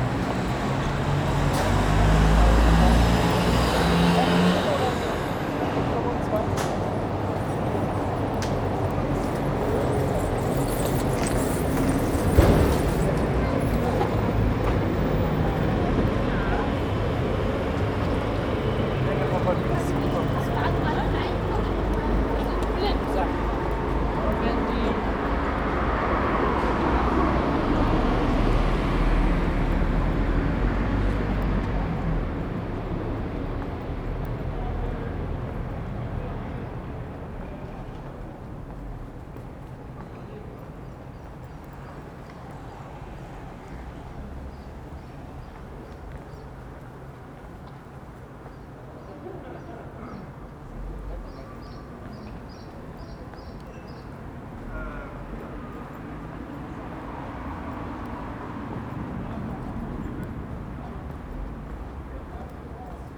Grunowstraße, Berlin, Germany - Pankow Soundwalk anniversary in Covid-19 times: Extract 1 Moving from the station into residential streets
Extract 1: Moving from the station into residential streets. The 5 Pankow Soundwalks project took place during spring 2019. April 27 2020 was the first anniversary of walk1. So I walked the same route in celebration starting at Pankow S&U Bahnhof at the same time. The coronavirus lockdown has caused some changes. Almost no planes are flying (this route is directly under the flight path into Tegel Airport), the traffic reduced, although not by so much and the children's playgrounds are closed. Locally these make quite a difference. Five extracts of the walk recording can be found on aporee.
Deutschland, 27 April, 17:50